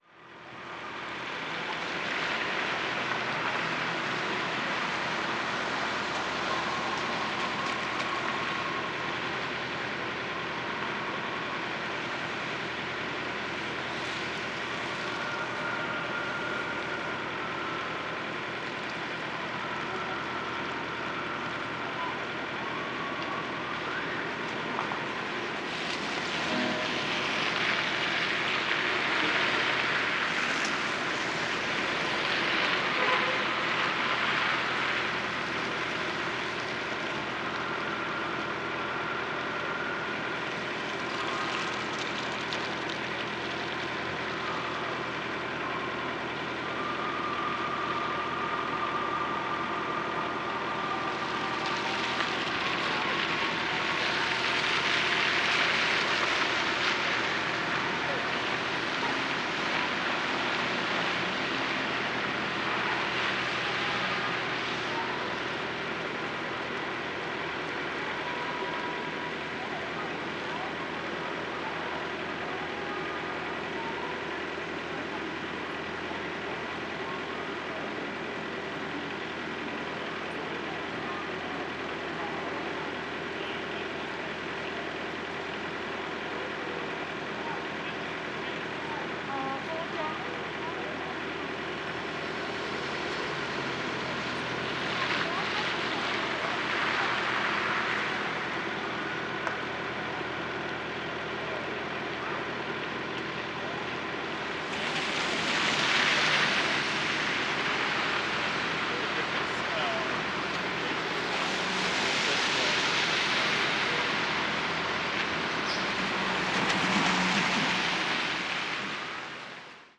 Rue Crescent, Montréal, QC, Canada - Crescent Street
Recording at the corner of Crescent St and Saint-Catherine St. Cars are slowly driving through the intersection with their tires passing through the snow. In the background, there is a parked truck with its engine on and moments of a few distant voices. This street usually hosts many types of restaurants/bars, yet they have been closed for an extended period and therefore there is no one around these areas.